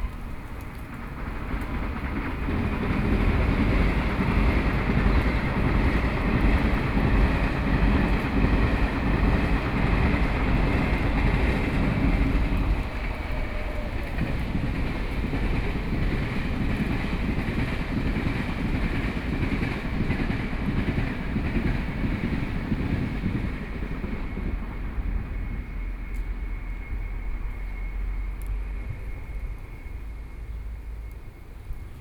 Fugang, Yangmei, Taoyuan County - Small Town
Small Town, Traffic Noise, Aircraft flying through, Distant thunder hit, Train traveling through, Sony PCM D50+ Soundman OKM II